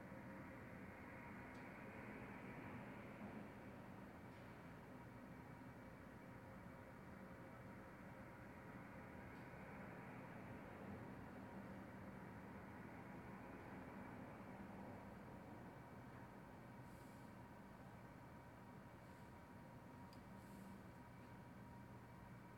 Bolton Hill, Baltimore, MD, USA - Traffic Echo
Late-night traffic heard from a glass-walled hallway.
14 November 2016, ~00:00